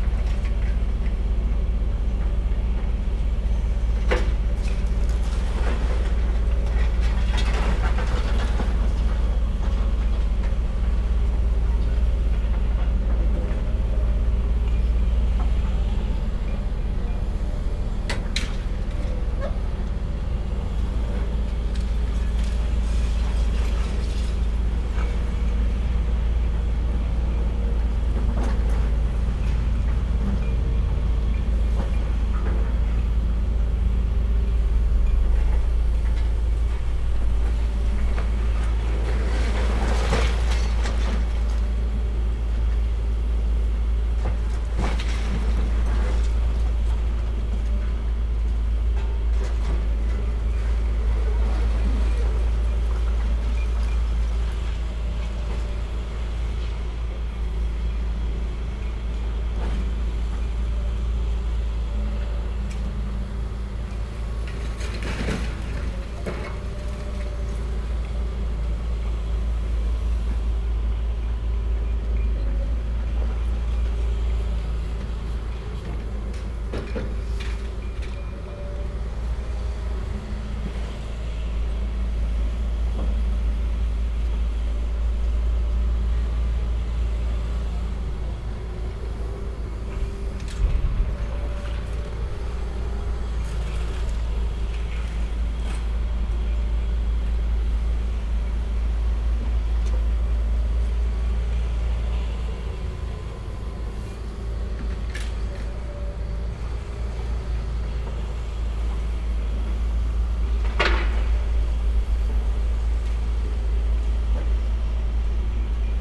Basingstoke Road, Reading, UK - Demolition of old pink Art Deco factory recorded from Ultima Business Solutions Carpark
Recorded through the fence looking directly onto the building site until I was told to get off because it's private property.